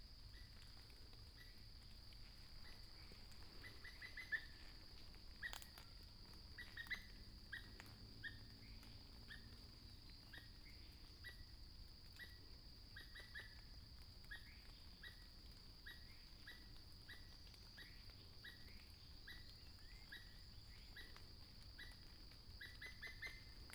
水上巷, 埔里鎮桃米里, Taiwan - In the woods
Bird sounds, In the woods Sound of water droplets
Puli Township, 水上巷, 21 April 2016